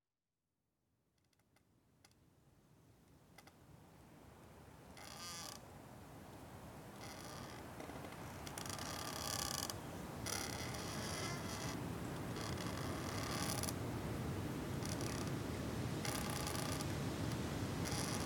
Recorded in a woods, this is the sound of a tree creaking whilst being pushed by the wind. Recorded with a Tascam DR100 and DPA4060 microphones.
Cornwall, UK